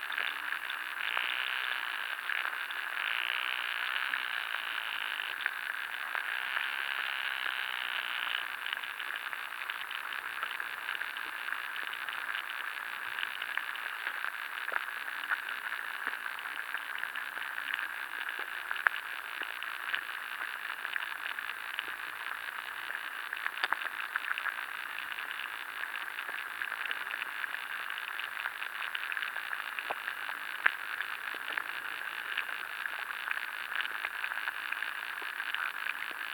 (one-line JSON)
{"title": "Jūrmala, Latvia, listening to river Lielupe", "date": "2020-07-21 14:30:00", "description": "underwater life in river Lielupe", "latitude": "56.97", "longitude": "23.77", "altitude": "2", "timezone": "Europe/Riga"}